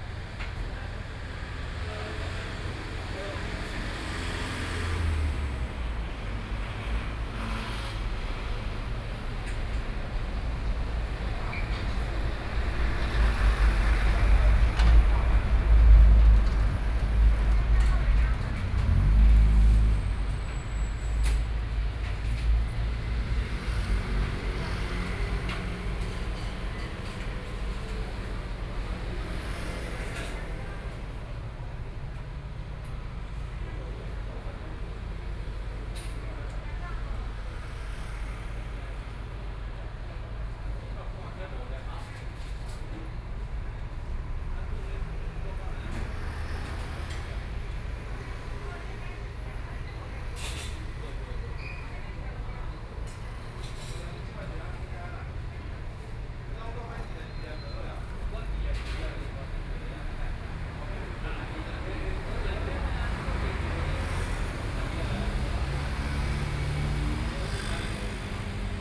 Zhonghua Rd., Beidou Township - Standing in front of the restaurant
In the roadside outside the restaurant, Traffic Sound, Binaural recordings, Zoom H6+ Soundman OKM II
Beidou Township, Changhua County, Taiwan, 23 December